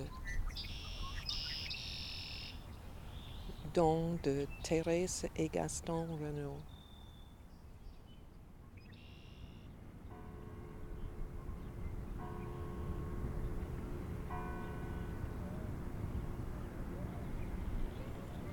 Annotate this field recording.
Condensed from a soundwalk and ride to the end of the big jetty, formerly used for waiting cargo ships. It is now Parc Rene Levesque, an extension of the bicycle path to the mouth of the Lachine harbour, by the rapids.